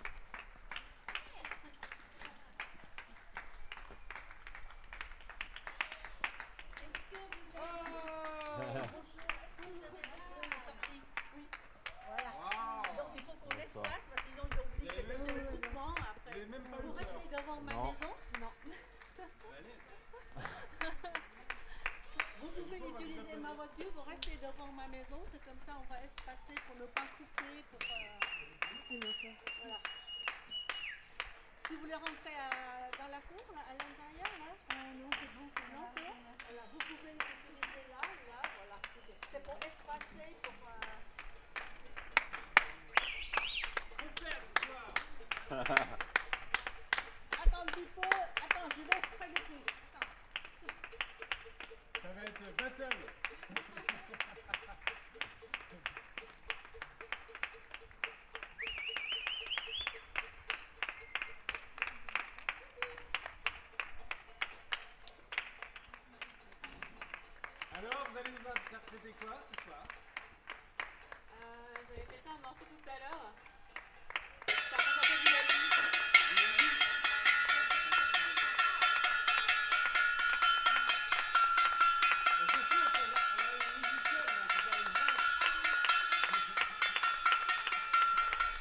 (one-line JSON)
{"title": "Rue Edmond Nocard, Maisons-Alfort, France - Coronavirus Covid 19 Street Concert Trumpet Violin", "date": "2020-04-17 20:00:00", "description": "Street Concert for our careers during Covid 19 Containement with Voices, Pan, Trumpet and Violin, Song \"Olé\", \"La Marseillaise\" and Tribute to singer Christophe died the day before.\nConcert improvisé tous les soirs à 20h dans une rue pavillonnaire pour soutenir les aides soignants pendant le confinement. Applaudissements, concert de casserole, trompette au balcon, violon, voix voisinage....\nEnregistrement: Colin Prum", "latitude": "48.81", "longitude": "2.43", "altitude": "37", "timezone": "Europe/Paris"}